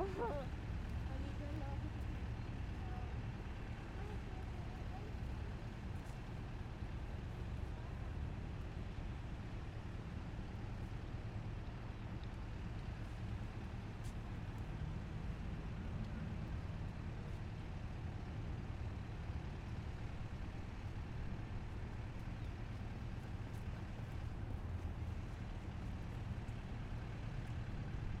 Cra. 72 Bis, Bogotá, Colombia - Calle junto a Makro Av Boyacá
Calle Cerrada cerca de la Av Boyacá en el Barrio Minuto de Dios
12 November 2019, 20:55